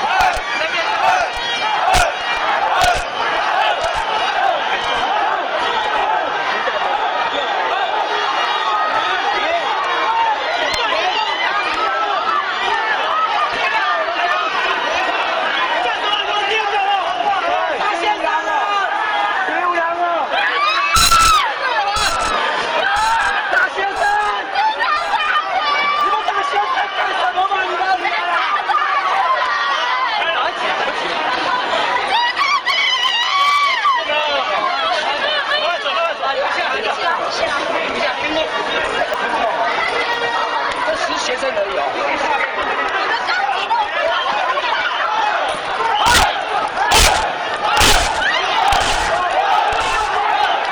Sec., Zhongshan N. Rd., Zhongshan Dist. - Protest and confrontation
Police are working with protesting students confrontation, Sony ECM-MS907, Sony Hi-MD MZ-RH1